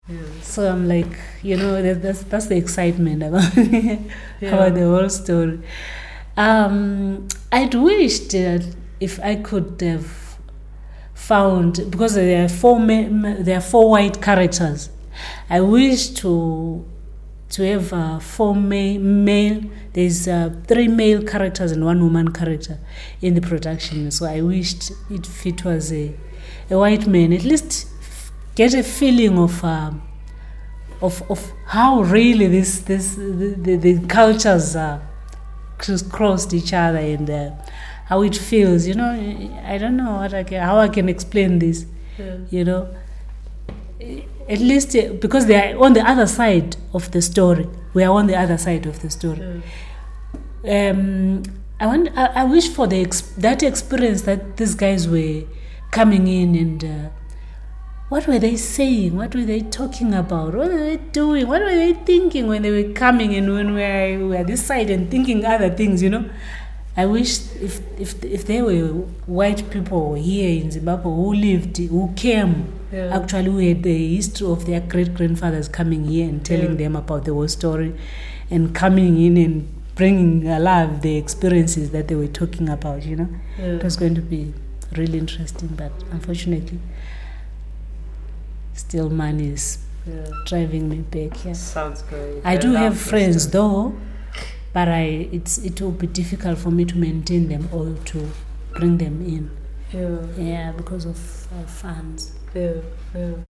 Amakhosi Cultural Centre, Old Falls Rd, Bulawayo, Zimbabwe - this side and that side…
… Thembi continues pinpointing how she wants to re-stage and bring to life the inter-cultural clashes and fusions which the production portraits….
Thembi Ngwabi was trained as an actress at Amakhosi and also become a well-known bass guitarist during her career; now she’s training young people as the leader of the Amakhosi Performing Arts Academy APAA.
The complete interview with Thembi Ngwabi is archived at: